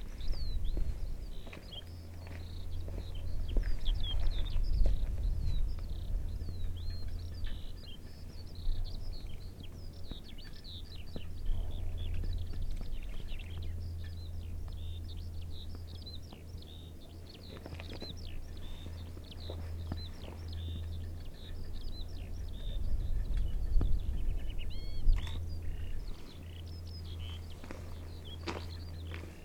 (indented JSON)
{
  "title": "Otterburn Artillery Range - armored field post",
  "date": "2010-06-15 13:52:00",
  "description": "Birds and single blast at checkpoint near gated road at Cocklaw Green.",
  "latitude": "55.31",
  "longitude": "-2.22",
  "altitude": "301",
  "timezone": "Europe/London"
}